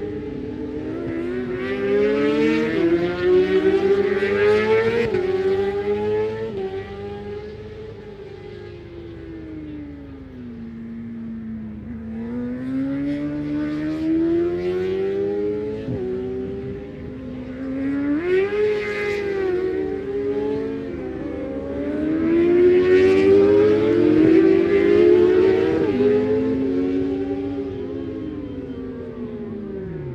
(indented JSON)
{
  "title": "Unit 3 Within Snetterton Circuit, W Harling Rd, Norwich, United Kingdom - british superbikes 2005 ... supersports qualifying ...",
  "date": "2005-07-09 15:10:00",
  "description": "british superbikes ... supersports 600s qualifying ... one point stereo mic to minidisk ... time appproximate ...",
  "latitude": "52.46",
  "longitude": "0.95",
  "altitude": "41",
  "timezone": "Europe/London"
}